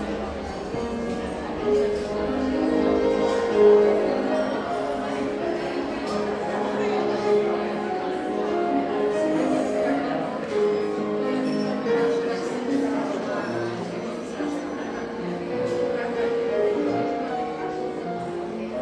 Café Slavia, Old Town, Prague-Prague, Czech Republic - Café Slavia, Prague